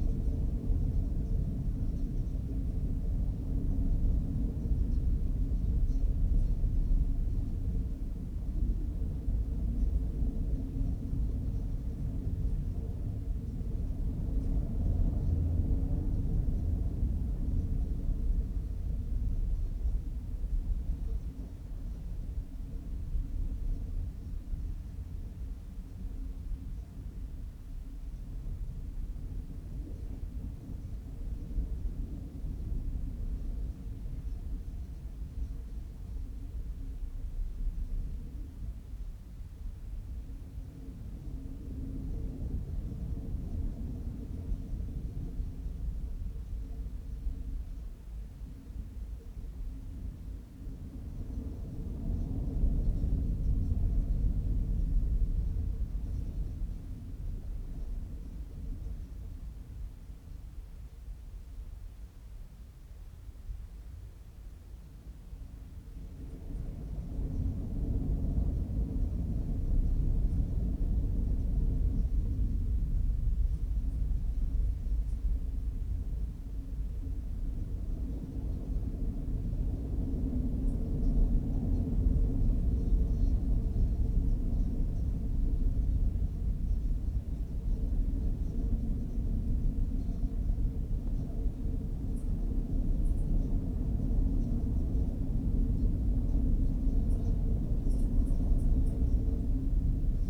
{
  "title": "Lokvica, Miren, Slovenia - Electric tower in the wind with contact microphone.",
  "date": "2020-12-27 11:02:00",
  "description": "Electric tower in the wind with contact microphone.\nRecorded with MixPre II and AKG C411, 60Hz HPF, denoise.",
  "latitude": "45.88",
  "longitude": "13.60",
  "altitude": "230",
  "timezone": "Europe/Ljubljana"
}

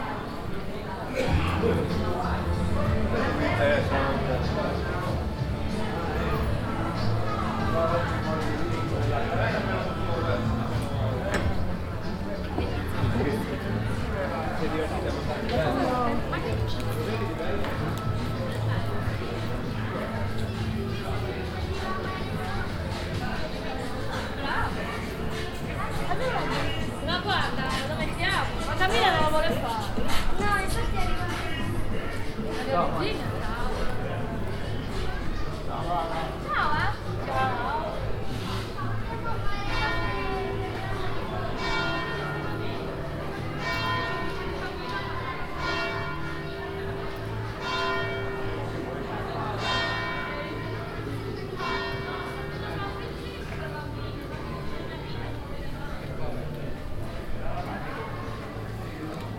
{
  "title": "villanova, via garibaldi, fiesta cuccina, kantina, musica",
  "date": "2009-07-27 18:19:00",
  "description": "seasonal weekend fiesta in the village historical center\nsoundmap international: social ambiences/ listen to the people in & outdoor topographic field recordings",
  "latitude": "44.05",
  "longitude": "8.14",
  "altitude": "36",
  "timezone": "Europe/Berlin"
}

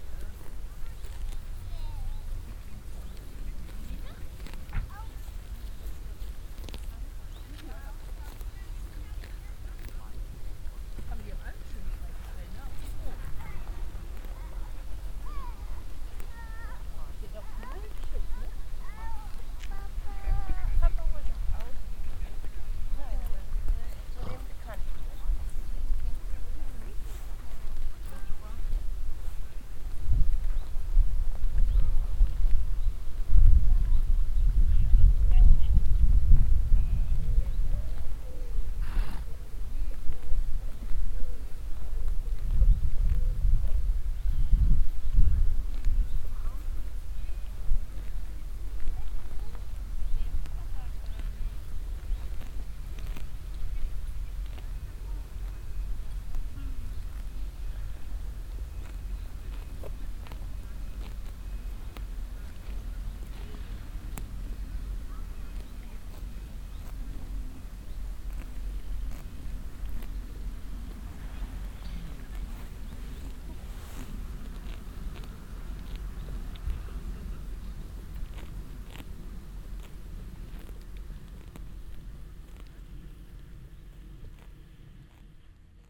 {
  "title": "knuthenborg, safari park, donkeys eating meadow",
  "date": "2010-09-08 12:15:00",
  "description": "inside the safari park area, wild donkeys eating meadow on a wide meadow - some visitors walking around talking\ninternational sound scapes - topographic field recordings and social ambiences",
  "latitude": "54.82",
  "longitude": "11.51",
  "altitude": "6",
  "timezone": "Europe/Copenhagen"
}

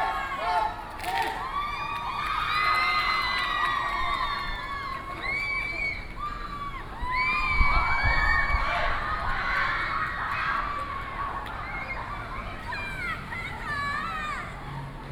{
  "title": "National Theater, Taiwan - Hurray",
  "date": "2013-09-29 19:29:00",
  "description": "A group of high school students are practicing performances, Children and high school students each cheers, Sony PCM D50 + Soundman OKM II",
  "latitude": "25.04",
  "longitude": "121.52",
  "altitude": "6",
  "timezone": "Asia/Taipei"
}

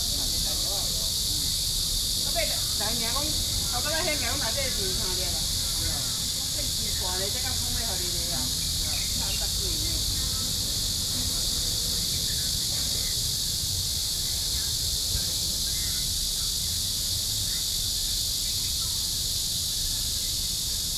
{
  "title": "長壽親子公園, Shulin Dist. - in the Park",
  "date": "2012-07-08 12:28:00",
  "description": "in the Park, Cicadas called, Hot weather, Bird calls\nBinaural recordings\nSony PCM D50 + Soundman OKM II",
  "latitude": "24.99",
  "longitude": "121.42",
  "altitude": "21",
  "timezone": "Asia/Taipei"
}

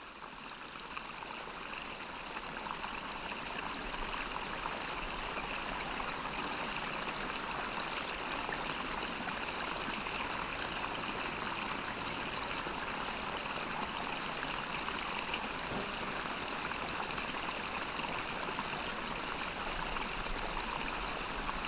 {
  "title": "Voverynė, Lithuania, springlets valley",
  "date": "2021-03-21 14:40:00",
  "description": "there is real valley of small springlets near the swamp. combined recording of a pair of omni mics (closer details) and sennheiser ambeo for atmosphere",
  "latitude": "55.53",
  "longitude": "25.61",
  "altitude": "112",
  "timezone": "Europe/Vilnius"
}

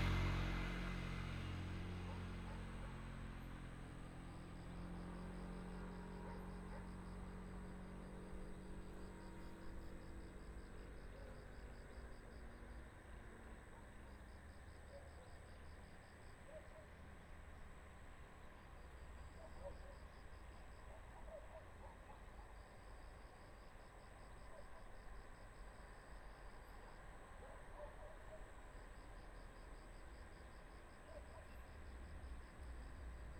{
  "title": "Mudan Township, Pingtung County - Evening in the mountain road",
  "date": "2018-04-02 18:18:00",
  "description": "Evening in the mountain road, Traffic sound, Dog barking, Village Broadcasting Message",
  "latitude": "22.13",
  "longitude": "120.79",
  "altitude": "179",
  "timezone": "Asia/Taipei"
}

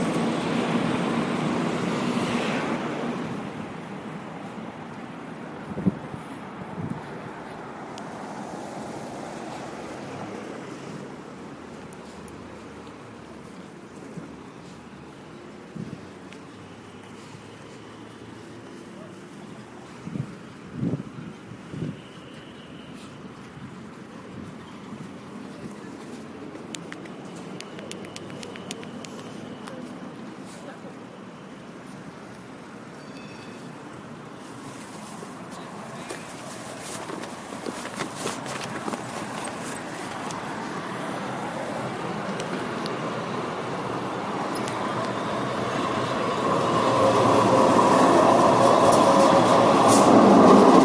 {
  "title": "San Francisco Art Institute, San Francisco, CA, USA - Fire alarming in SFAI grad campus on 3rd st",
  "date": "2012-09-20 15:42:00",
  "description": "SFAI grad center Building cried out loud with fire alarming. I couldn't say sorry to him, but rushed to take MUNI..",
  "latitude": "37.76",
  "longitude": "-122.39",
  "altitude": "11",
  "timezone": "America/Los_Angeles"
}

